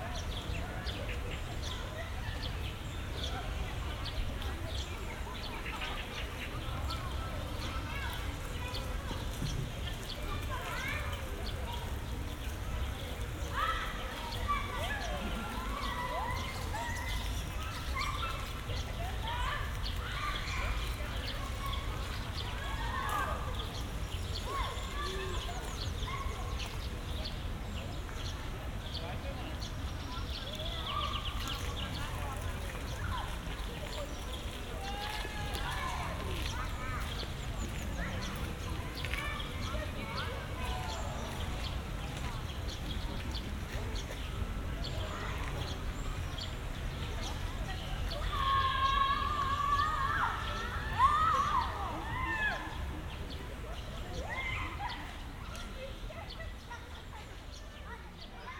Vilnius, Lithuania, Bernardinai garden, kids
Evening at Bernardinai garden, near kids place
Vilniaus miesto savivaldybė, Vilniaus apskritis, Lietuva, 28 May 2021